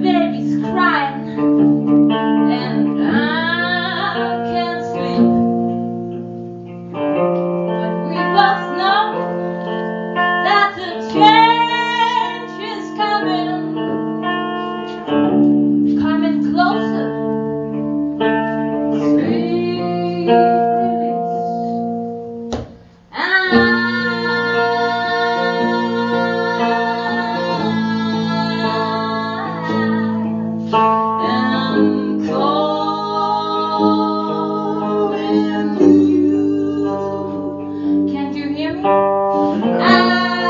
Concert at Der Kanal, Weisestr. 59
As a company to the exhibition of Fred Martin, the fabulous CALL ME UP! are playing their most beloved evergreens. The neighbours don't like it. We do!!!
Deutschland, European Union